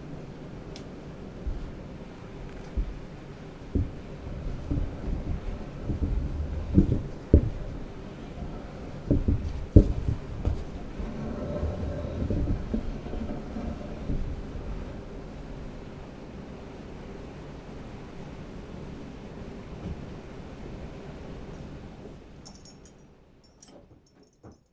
Recording from inside the old water mill at Husån rapids, Trehörningsjö. The mill is of the type called skvaltkvarn with horizontal millstones. The recordings starts just before the water stream is lead to the mill wheel, and then during the water is streaming and turning the millstone. Recording was made during the soundwalk on the World Listening Day, 18th july 2010.